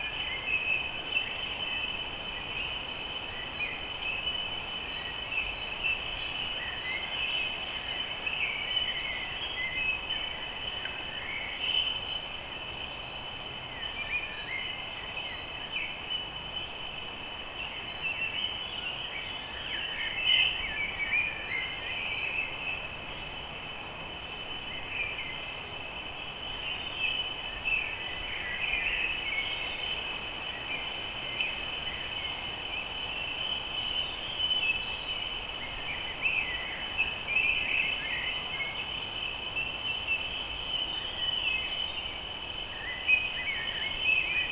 A hidden place
An island in the river
the city is all around
still
the river is listening
to what is thrown into it
to people long ago
and far away
to the one
who came
to listen alongside
even
to you